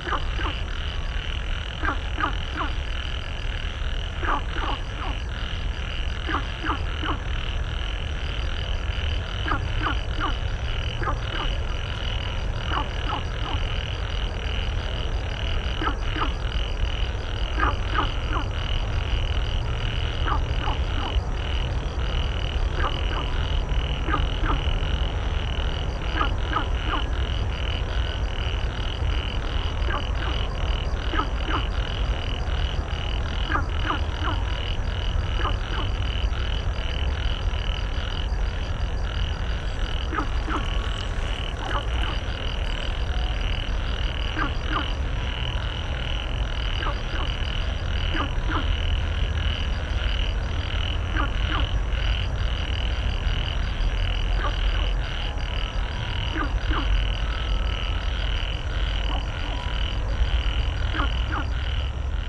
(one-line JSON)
{"title": "Dazhuwei, Tamsui Dist., New Taipei City - Frog chirping", "date": "2012-04-19 19:40:00", "description": "Frog calls, Beside the river, traffic sound\nSony PCM D50", "latitude": "25.14", "longitude": "121.46", "altitude": "3", "timezone": "Asia/Taipei"}